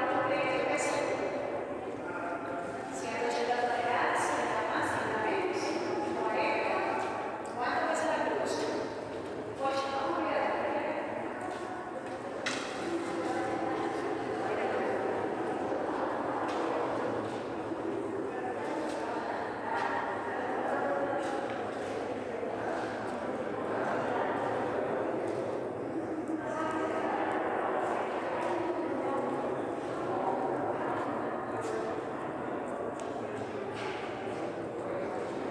Parque De La Sal, Zipaquirá, Cundinamarca, Colombia - Mine of the Salt Cathedral of Zipaquirá - Inside
In this audio you will hear the inside of the mine of the Zipaquirá Salt Cathedral. You will be able to hear how tourist tures are performed inside the mine, the reverberation that sits inside the place and tourists taking photographs at an important point in the mine.
Cundinamarca, Región Andina, Colombia